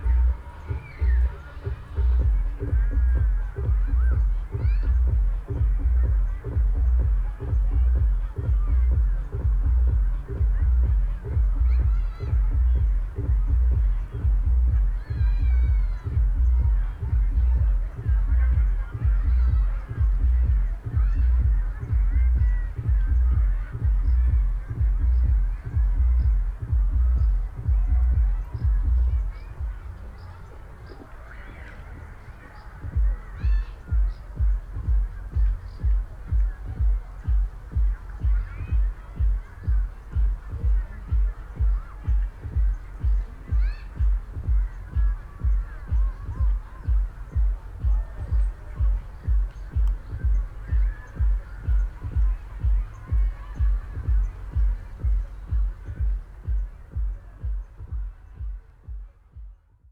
Tempelhofer Feld, Berlin, Deutschland - poplar trees, soundsystem
place revisited, it's disappointing, a sound system somewhere nearby is occupying the place, along with the hum of hundreds of people BBQing...
(Sony PCM D50, DPA4060)